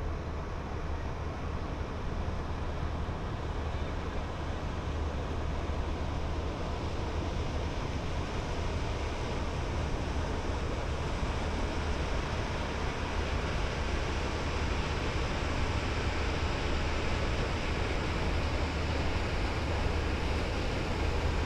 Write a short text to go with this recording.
The Amalegro tourist boat is passing by on the Seine river.